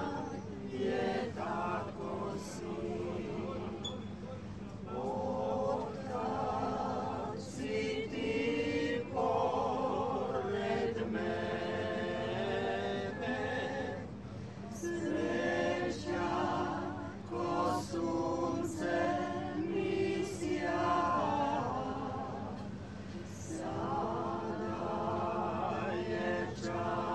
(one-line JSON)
{"title": "trg bana josipa jelacica, cafe", "date": "2010-06-10 21:02:00", "description": "we\ne having a drink when the 8 people at the next table show their choral talent, this was done during the sitting down part of our EBU Radio Drama workshop sound walk with Milos", "latitude": "45.81", "longitude": "15.98", "altitude": "134", "timezone": "Europe/Zagreb"}